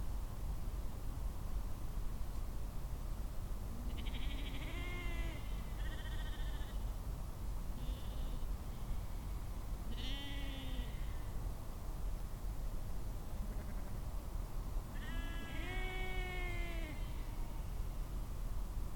Nortower Lodges, Shetland Islands, UK - Sheep
When I came home to Nortower Lodges, where I stayed in Shetland for Shetland Wool Week and for the North Atlantic Sheep and Wool conference, I heard a lot of baaing in the fields. I think this was the day when the ewes were separated from their ram lambs and the lambs were sent for meat. It was a lot of baaing, late into the night and I stood for a while and listened before heading to my bed.
12 October 2013, 21:00